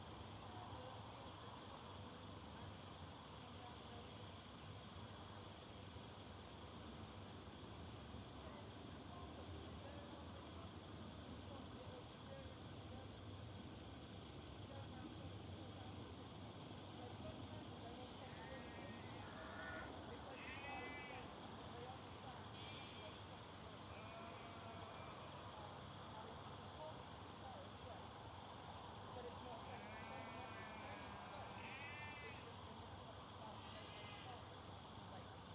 A field of sheep, Medmenham, UK - shearing day
I was invited by a friend at the Berkshire Guild of Weavers, Spinners and Dyers to help out on shearing day. This flock is a conservation grazing flock featuring Shetlands, Jacobs, Black Welsh Mountains, Herdwicks... possibly some other ones? I was very late and when I arrived the shearers had done most of the sheep already, I hung my microphones in a tree to record the last few, and you can hear the clippers; the sheep all going crazy because the lambs and ewes don't recognise each other so easily after the ewes have been sheared; the nearby road; wind in the trees; insects and then the shearers packing up their stuff and driving home. You can also hear us sorting the fleeces, bagging up any that handspinners might like and chucking all the worse ones into a sack for the Wool Marketing Board to collect. Our voices echo in a really strange way because it's such a long, open field.
7 July